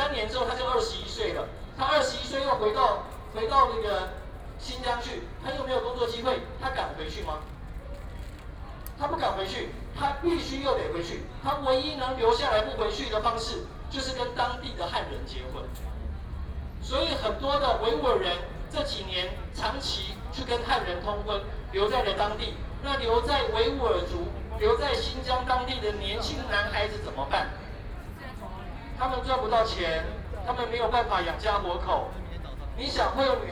Qingdao E. Rd., Taipei City - occupied Legislative Yuan
Walking through the site in protest, People and students occupied the Legislative Yuan
Binaural recordings
Taipei City, Taiwan